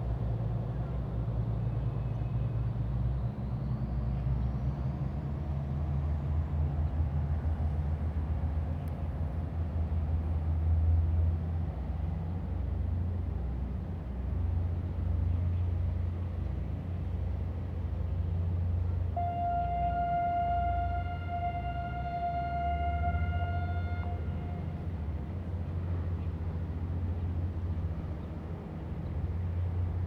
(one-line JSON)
{"title": "小琉球遊客中心, Liuqiu Township - A distant ship whistle", "date": "2014-11-01 10:06:00", "description": "Birds singing, A distant ship whistle", "latitude": "22.35", "longitude": "120.38", "altitude": "9", "timezone": "Asia/Taipei"}